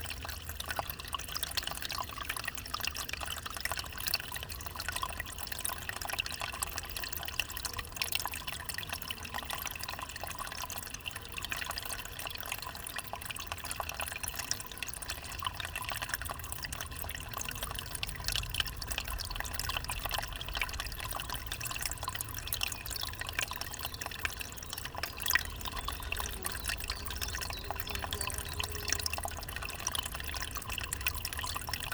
Genappe, Belgique - Spring
A small spring flowing from the ground near the Ry d'Hez river.
Genappe, Belgium, April 2017